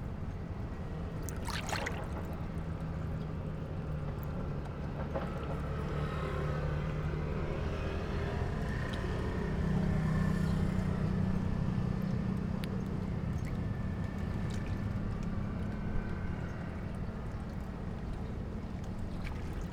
菜園海洋牧場遊客碼頭, Magong City - In the dock
In the dock, Waves and tides, Wind
Zoom H6+Rode NT4
Penghu County, Magong City